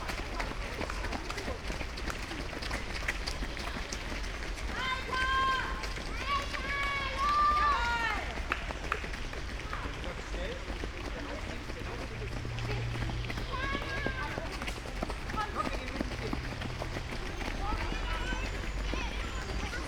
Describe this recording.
Eversten Holz, Oldenburg, annual kids marathon, kids running-by, (Sony PCM D50, Primo EM172)